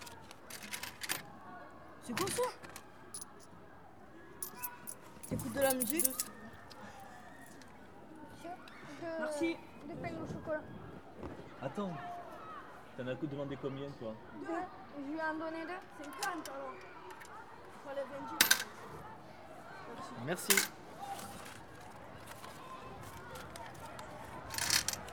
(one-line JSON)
{"title": "Salle d'étude, Segpa, collège de Saint-Estève, Pyrénées-Orientales, France - Vente des pains au chocolat à la récréation", "date": "2011-03-17 15:11:00", "description": "Preneuse de son : Justine", "latitude": "42.71", "longitude": "2.84", "altitude": "46", "timezone": "Europe/Paris"}